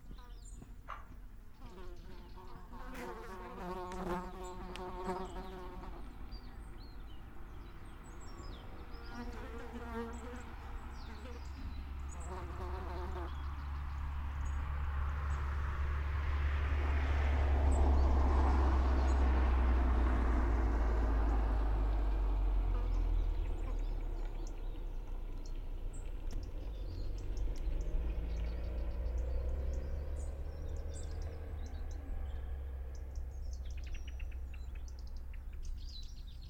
Lachania, Griechenland - Lahania, Rhodos, lookout
On the lookout on the western side of the village overlooking Lahania Valley. Afternoon. There has been some rain earlier. Birds.Nuts falling down from an Eucalyptus tree. People coming home from work. Binaural recording. Artificial head microphone facing west.Recorded with a Sound Devices 702 field recorder and a modified Crown - SASS setup incorporating two Sennheiser mkh 20 microphones.
Περιφέρεια Νοτίου Αιγαίου, Αποκεντρωμένη Διοίκηση Αιγαίου, Ελλάς, 27 October 2021, 15:30